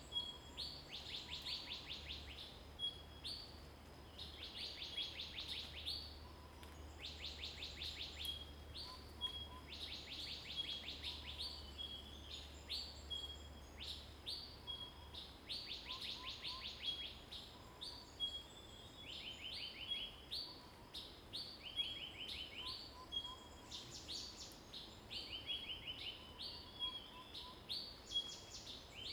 水上, 桃米里, Puli Township - in the woods
Birds singing, in the woods
Zoom H2n MS+ XY
26 April 2016, ~05:00